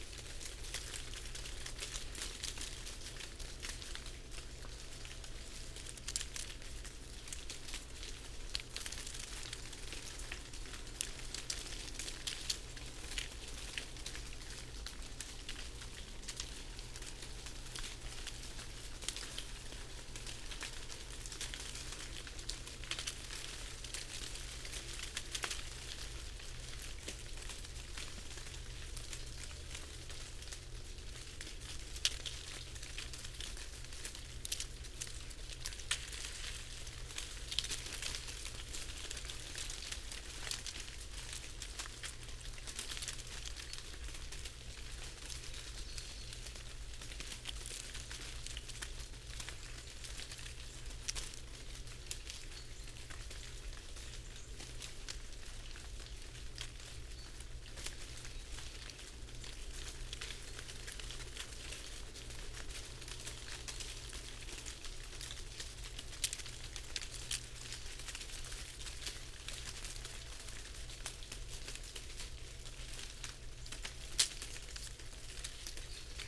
Green Ln, Malton, UK - falling sycamore leaves ...
falling sycamore leaves ... parabolic ... very cold and still morning ... the dessicated leaves falling in almost a torrent ... bird calls ... pheasant ... great tit ... blue tit ... blackbird ... chaffinch ... crow ... background noise ...
Yorkshire and the Humber, England, United Kingdom, 19 November 2019, ~10:00